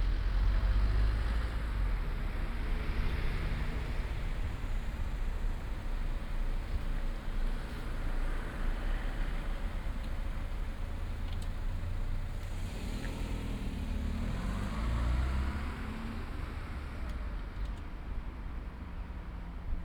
Cricket Field Car Park
Standing by car park barrier